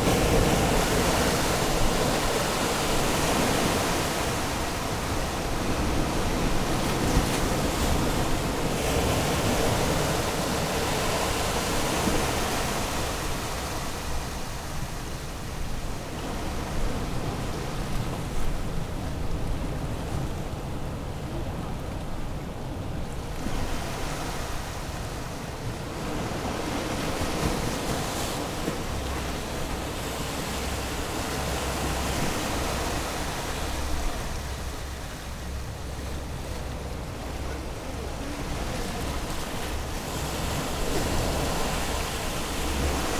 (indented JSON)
{
  "title": "West Lighthouse, Battery Parade, UK - West Pier Whitby ...",
  "date": "2019-10-05 10:30:00",
  "description": "West Pier Whitby ... lavalier mics clipped to bag ... background noise ... works on the pier ...",
  "latitude": "54.49",
  "longitude": "-0.61",
  "timezone": "Europe/London"
}